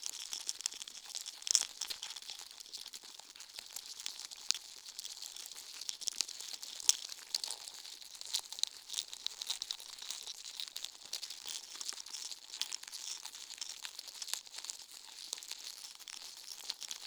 개미 집 Large black ants nest
개미 집_Large black ants nest
(No ants nor humans were killed or injured during the making of this recording!)
강원도, 대한민국